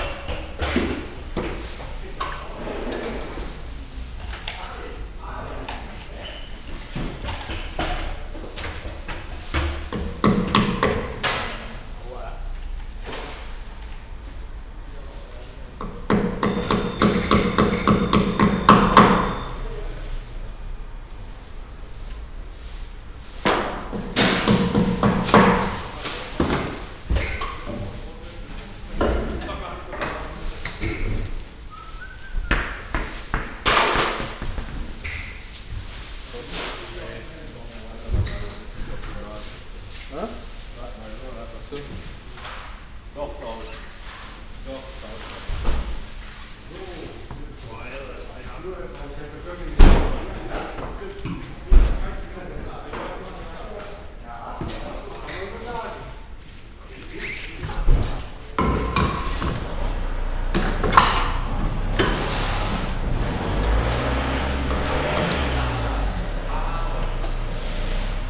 bensberg, rathenaustrasse, wheel change
In a reverbing construction hall at a mechanic who provides car wheel changes. The sounds of tools and pneumatic pressure and air release as the mechanic change wheels on several cars simultaneously. Also the sound of a car starting its engine inside the hall and the mechanics talking.
soundmap nrw - social ambiences and topographic field recordings